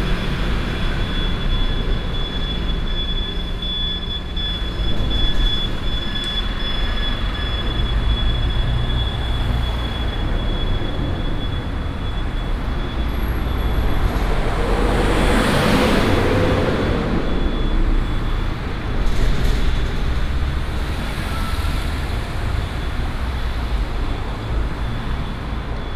Sec., Xitun Rd., 台中市西屯區西墩里 - Under the highway
Traffic sound, Under the highway
Taichung City, Taiwan, 22 March 2017, ~13:00